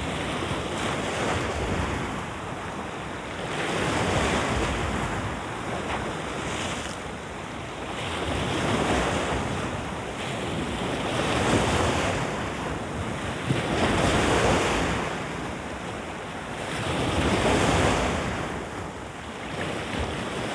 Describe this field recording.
Lapping waves of the sea. Шум прибоя недалеко от устья реки Тамица.